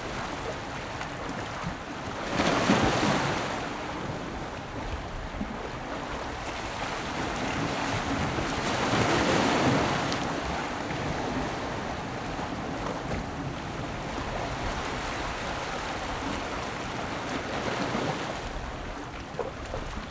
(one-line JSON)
{"title": "風櫃洞, Magong City - On the rocky coast", "date": "2014-10-23 14:41:00", "description": "Waves and tides, On the rocky coast\nZoom H6 + Rode NT4", "latitude": "23.54", "longitude": "119.54", "altitude": "4", "timezone": "Asia/Taipei"}